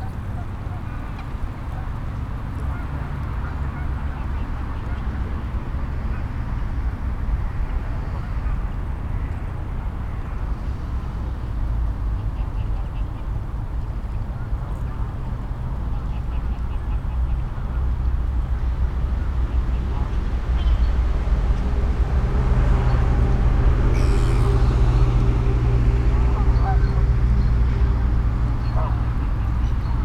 The Car Park Mere, Mere Ln, Scarborough, United Kingdom - The Mere ... daylight breaks ...
The Mere ... daylight breaks ... groups of canada and greylag geese take to the air ... bird calls and wing beats also from ... mute swan ... moorhen ... mallard ... grey heron ... black-headed gull ... blackbird ... magpie ... crow ... mandarin duck ... wren ... redwing ... chaffinch ... dunnock ... wood pigeon ... domesticated goose ... lavaliers clipped to sandwich box ... plenty of noise from the morning commute ...